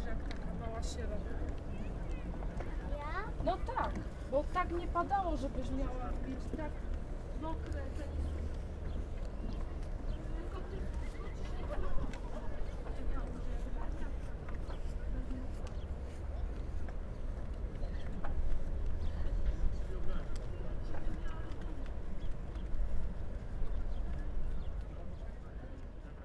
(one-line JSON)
{"title": "Sopot, Poland, pier", "date": "2014-08-14 14:40:00", "description": "on the pier. plane in the air", "latitude": "54.45", "longitude": "18.58", "timezone": "Europe/Warsaw"}